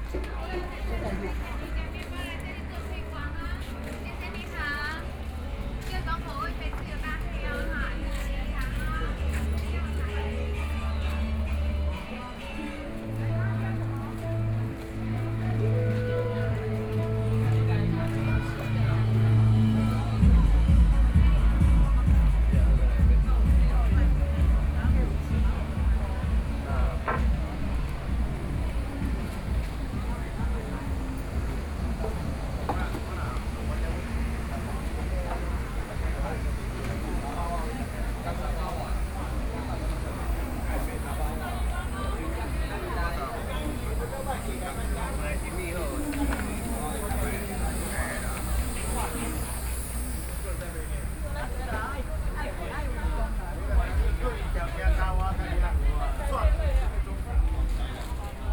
Sanmin Senior High School, District, New Taipei City - Regional carnival
Fair, Selling a variety of food and games area, Binaural recordings, Sony PCM D50 + Soundman OKM II